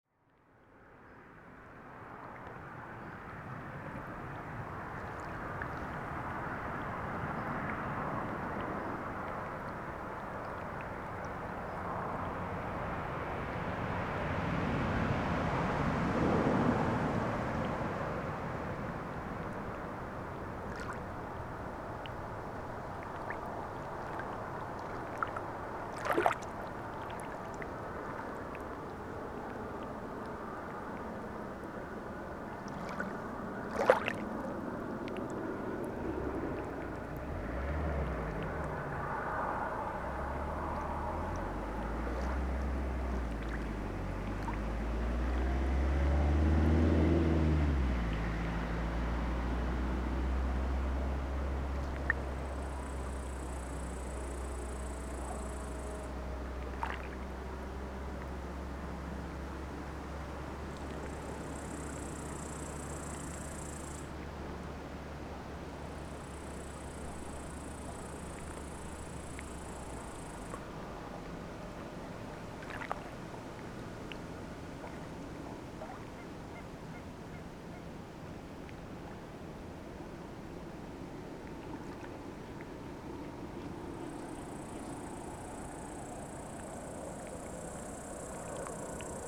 Lithuania, Sudeikiai, on the bridge
ambiences with cars passing by